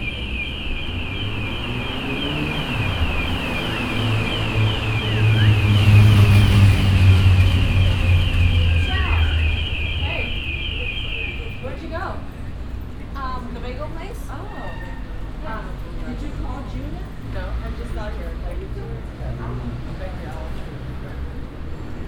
amsterdam, vijzelstraat, traffic and bells
different kind of traffic passing by. in the distance an hour bell
international city scapes - social ambiences and topographic field recordings
Amsterdam, The Netherlands, 6 July 2010